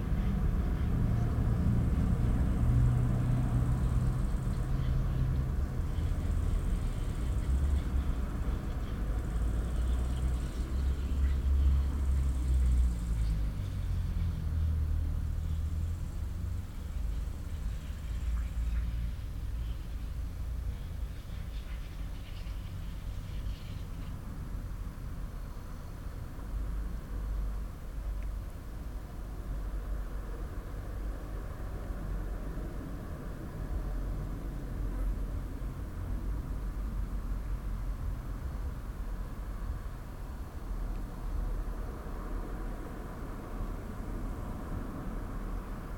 Parking de l'école, Chindrieux, France - Midi en été
Sur le parking de l'école de Chindrieux par une belle journée d'été, sonnerie du clocher, quelques criquets et oiseaux, le bruit de la circulation sur la RD 991 qui traverse le village.